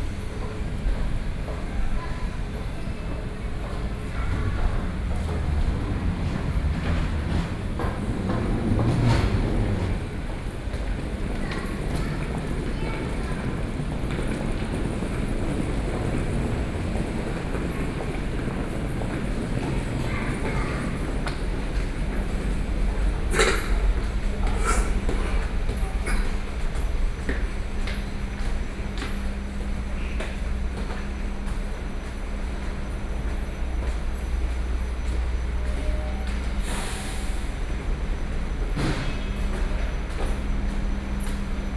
Songshan Airport Station, Songshan District - MRT stations
9 November, ~13:00